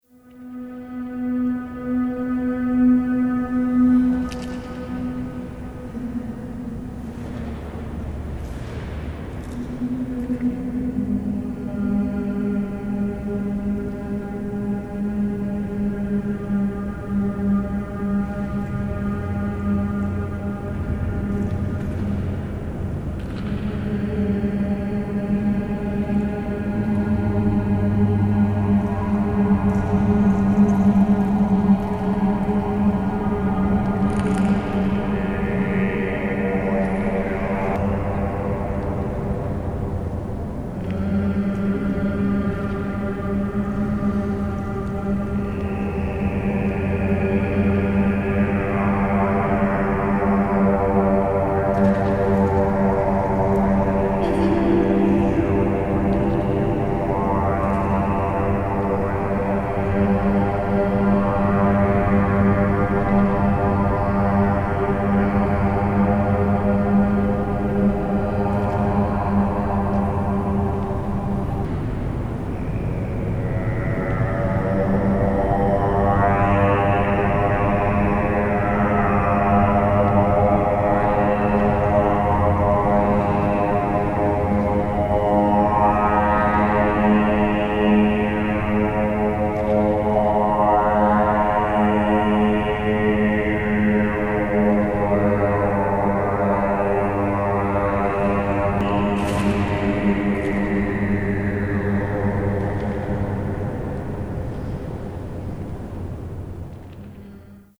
If we dont sing along, we wont get caught...
(2 nice guys downstairs, Dusan) in spontaneous sing session